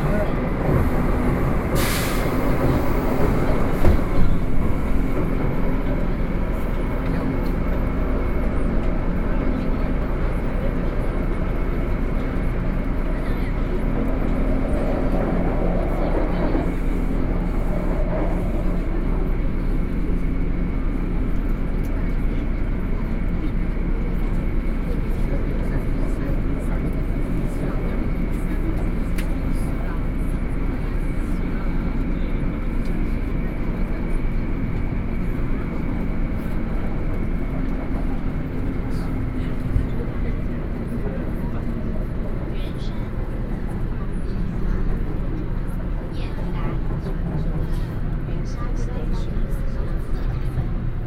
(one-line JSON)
{"title": "Taipei, Taiwan - in the MRT train", "date": "2012-10-05 22:04:00", "latitude": "25.06", "longitude": "121.52", "altitude": "11", "timezone": "Asia/Taipei"}